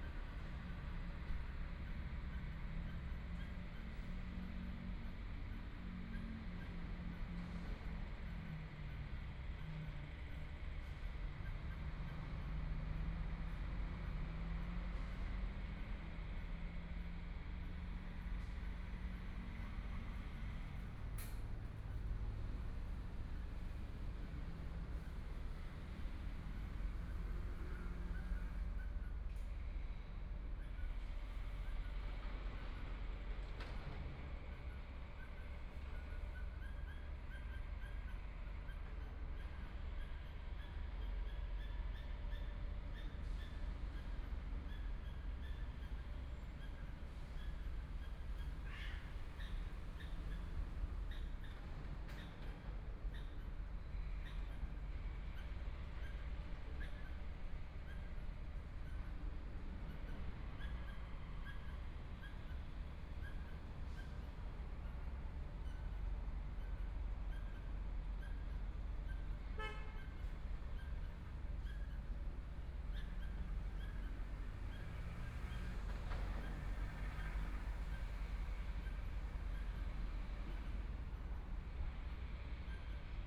LiaoNing Park, Taipei City - in the Park
in the Park, Cloudy day, Clammy, Distant construction noise, Traffic Sound, Motorcycle Sound, Birds singing, Binaural recordings, Zoom H4n+ Soundman OKM II